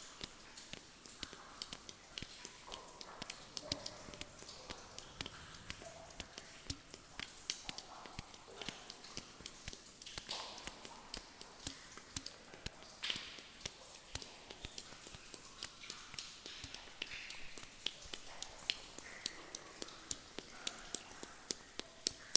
Rumelange, Luxembourg - Hutberg mine tiny music
Very tiny sounds in the Hutberg abandoned undeground mine.